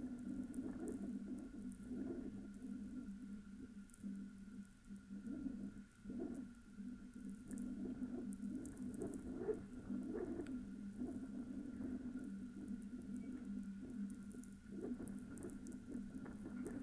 Latvia, Naujene, watchrower's grounding cable
contact microphones on watchtower's grounding cable...some hum, some wind and radio (again)
13 October 2012